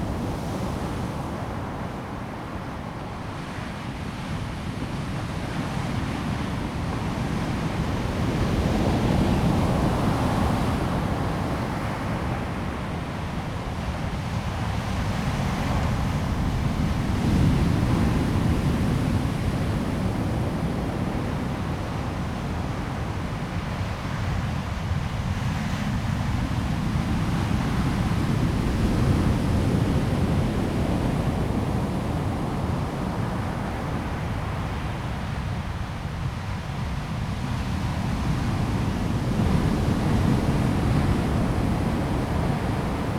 旭海牡丹灣, Mudan Township - At the beach
At the beach, Sound of the waves, wind
Zoom H2n MS+XY